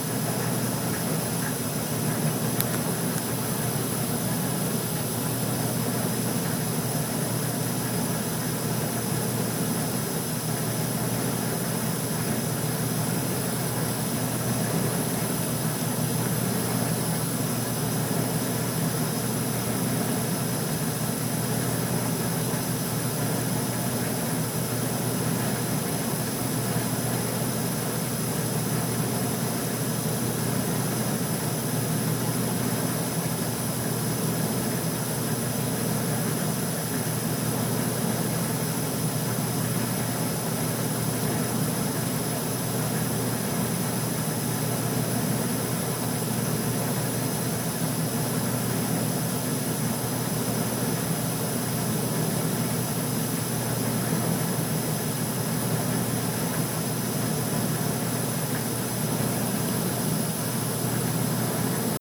Pacific Heights, San Francisco, CA, USA - burning up!
A recording of a old heater, dank grubby basement/inlaw apt. low heating ducts everywhere... the film "Brazil"/ small space/ iphone app/ getting ready to leave for school/ inside the actual unit vent close to flames.
26 September 2012